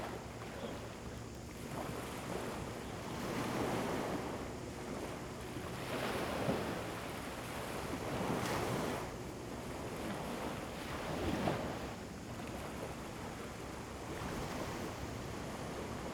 Small fishing port, Sound of the waves, Very Hot weather
Zoom H2n MS+XY